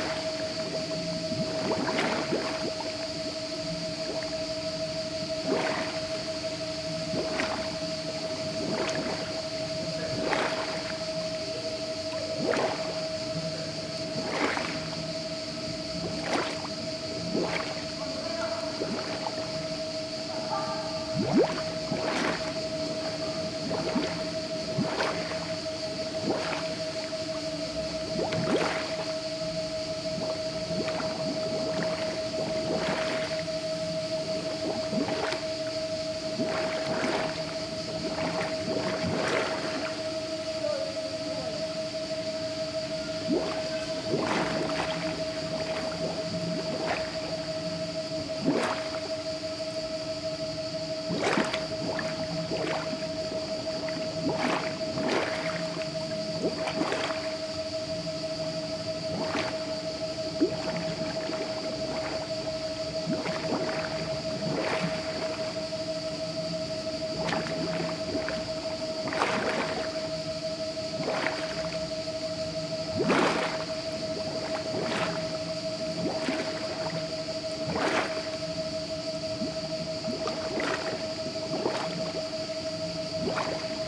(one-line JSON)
{"title": "Saintes-Maries-de-la-Mer, Frankreich - Château d'Avignon en Camargue - Water bubbling in the canal, 'Le domaine des murmures # 1'", "date": "2014-08-14 14:08:00", "description": "Château d'Avignon en Camargue - Water bubbling in the canal, 'Le domaine des murmures # 1'.\nFrom July, 19th, to Octobre, 19th in 2014, there is a pretty fine sound art exhibiton at the Château d'Avignon en Camargue. Titled 'Le domaine des murmures # 1', several site-specific sound works turn the parc and some of the outbuildings into a pulsating soundscape. Visitors are invited to explore the works of twelve different artists.\nIn this particular recording, you will hear the sound of water bubbling in one of the canals, the drone of the water pump from the machine hall nearby, the chatter and laughter of some Italian visitors as well as the sonic contributions of several unidentified crickets, and, in the distance, perhaps some sounds from art works by Julien Clauss, Emma Dusong, Arno Fabre, Franck Lesbros, and, last but not least, the total absence of sound from a silent installation by Emmanuel Lagarrigue in the machine hall.\n[Hi-MD-recorder Sony MZ-NH900, Beyerdynamic MCE 82]", "latitude": "43.56", "longitude": "4.41", "altitude": "9", "timezone": "Europe/Paris"}